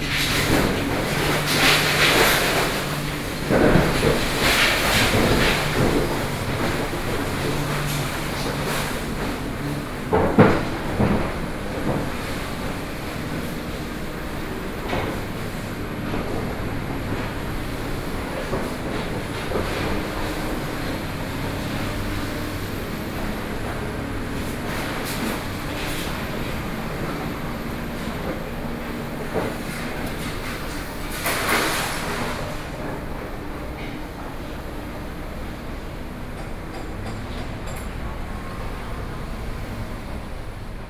New Taipei City, Taiwan - Construction
9 November 2012